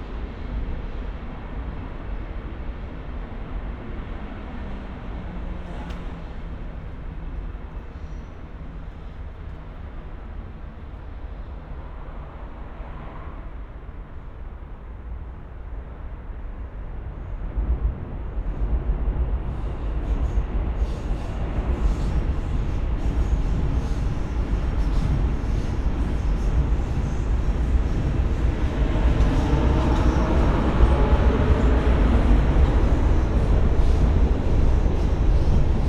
sounds of trains traffic passing on various layers. entrance to the Deutsche Bahn factory premises.
(tech: Olympus LS5 + Primo EM172 binaural)
Cologne, Germany, 14 May 2012